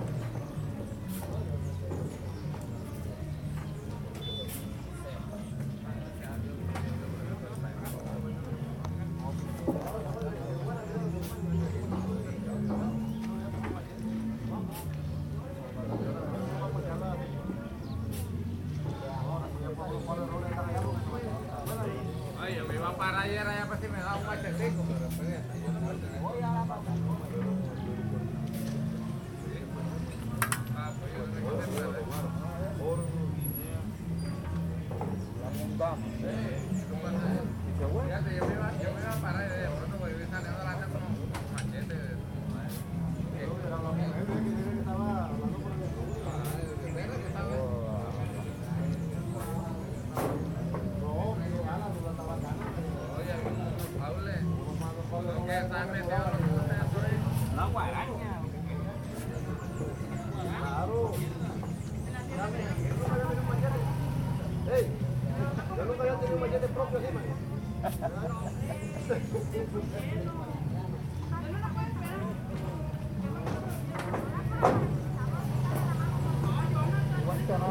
Cl., Mompós, Bolívar, Colombia - Areneros del Magdalena
Un grupo de areneros llena un furgón con arena sacada del río Magdalena, mientras conversan. En el río se ven otras barcas sacando arena del fondo del río.
2 May 2022